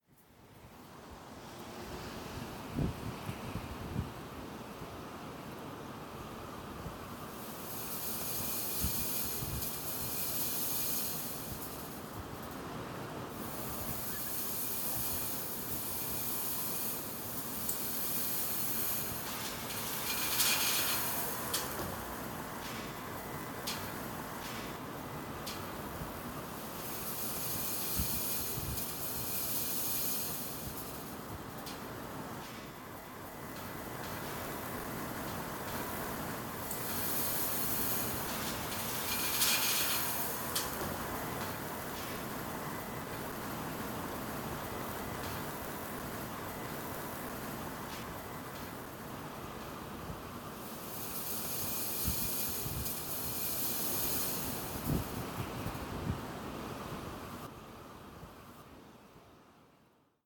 A protective fence was mounted around a very slow going construction site. On windy days the fence ripples and rattles along its posts and sounds like a thousand chimes echoing.
Eastern Ontario, Ontario, Canada